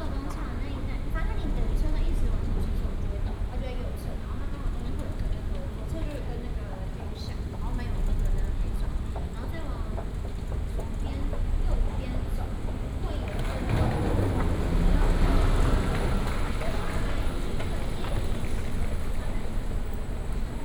Huanbei Station, Zhongli, Taoyuan City - walking at MRT station
Walking at MRT station, from the station platform, Through the hall, To export direction
Binaural recordings, Sony PCM D100+ Soundman OKM II
Taoyuan City, Taiwan, 28 February 2018, ~23:00